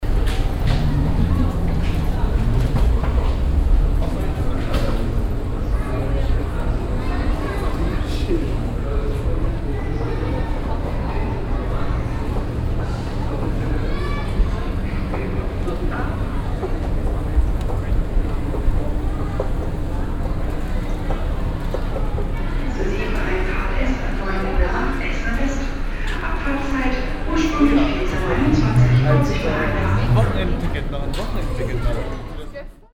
essen, main station, track 6
Am HBF Gleis 6. Der Klang von rollendem Gepäck. Die Rolltreppe die zum Gleis führt und eine Durchsage.
Sound of rolling luggage, the moving staircase leading up to track 6, - an announcement.
Projekt - Stadtklang//: Hörorte - topographic field recordings and social ambiences